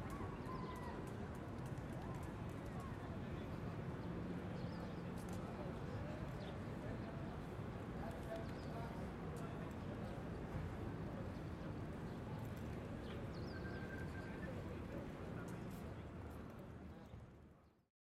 Ανθυπασπιστού Μιλτιάδη Γεωργίου, Ξάνθη, Ελλάδα - Central Square/ Κεντρική Πλατεία- 10:15
People talking, people passing by, woman laughing, distant traffic.
Περιφέρεια Ανατολικής Μακεδονίας και Θράκης, Αποκεντρωμένη Διοίκηση Μακεδονίας - Θράκης, 12 May 2020